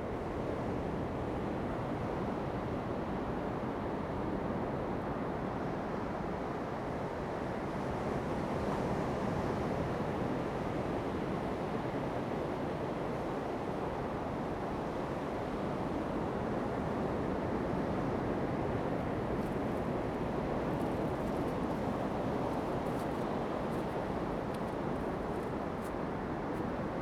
Jinhu Township, Kinmen County - At the beach
At the beach, Sound of the waves
Zoom H2n MS+XY
福建省, Mainland - Taiwan Border